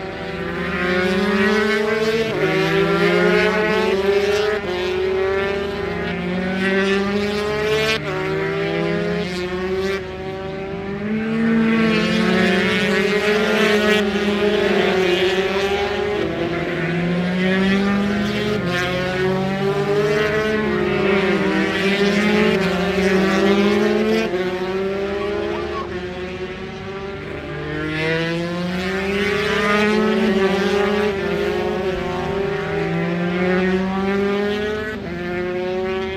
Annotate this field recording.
british superbikes ... 125 qualifying ... one point stereo mic to minidisk ... time approx ...